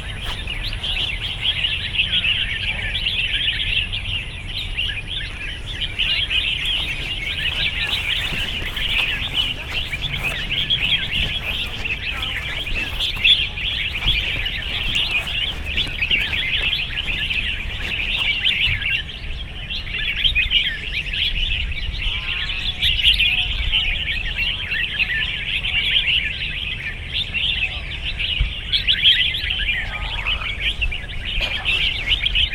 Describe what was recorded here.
Excerpts from a walk along Jl Kebun Sultan and Jl Sri Cemerlang to the park where weekly Bird Singing Contests are held